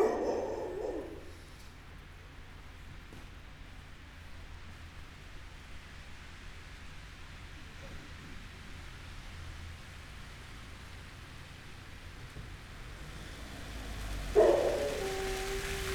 Innstraße, Innsbruck, Österreich - winter/schnee in st. nikolaus
Innsbruck, Austria, 5 January 2019